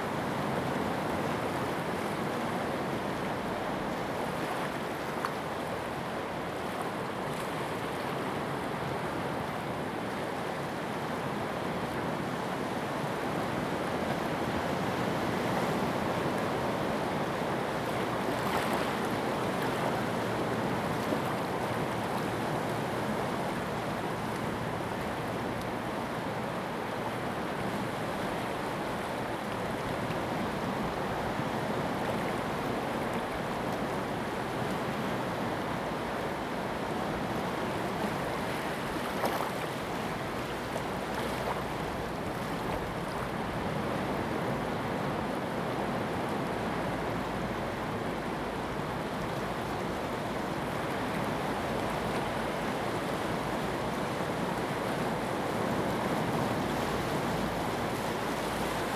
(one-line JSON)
{"title": "石門區德茂里, New Taipei City - the waves", "date": "2016-04-17 06:04:00", "description": "at the seaside, Sound of the waves\nZoom H2n MS+XY", "latitude": "25.29", "longitude": "121.52", "altitude": "4", "timezone": "Asia/Taipei"}